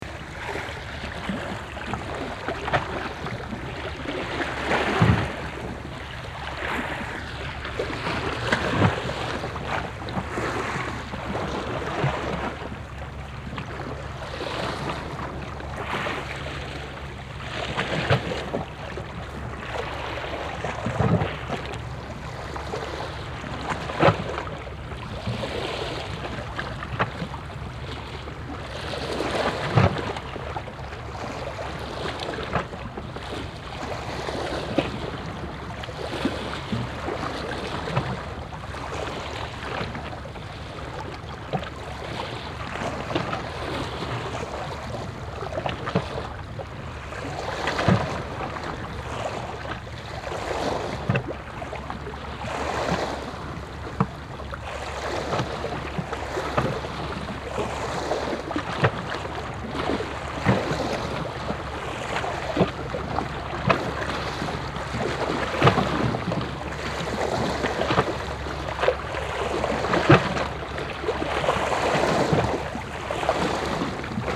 Sveio, Norwegen - Norway, Holsvik, rock crevice
On the rocks at the ford water. The sound of the water lapping in and out a deep rock crevice. A motor boat starting increases the waves.
international sound scapes - topographic field recordings and social ambiences